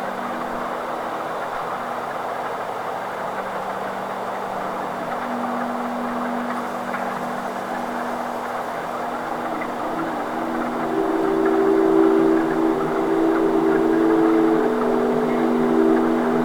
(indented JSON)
{
  "title": "Stockbridge, VT, USA - woodfrogs&wind",
  "description": "through an open window, a cool evening breeze plays a ukulele as a choir of woodfrogs sing along.",
  "latitude": "43.71",
  "longitude": "-72.73",
  "altitude": "476",
  "timezone": "Europe/Berlin"
}